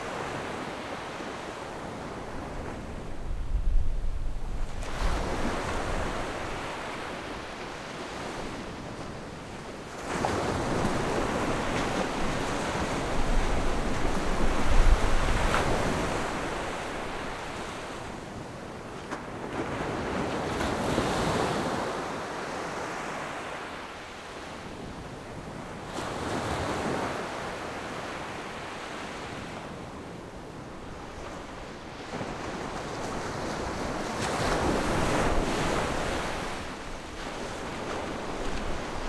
蒔裡海水浴場, Magong City - At the beach
At the beach, Windy, Sound of the waves
Zoom H6+Rode NT4